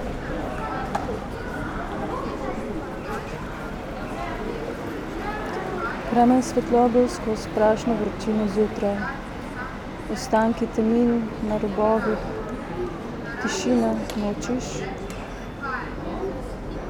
Secret listening to Eurydice, Celje, Slovenia - reading poems
reading Pier Paolo Pasolini poem with fragmented writings of my own realities (Petra Kapš)
first few minutes of one hour reading performance Secret listening to Eurydice 13 / Public reading 13 / at the Admission free festival.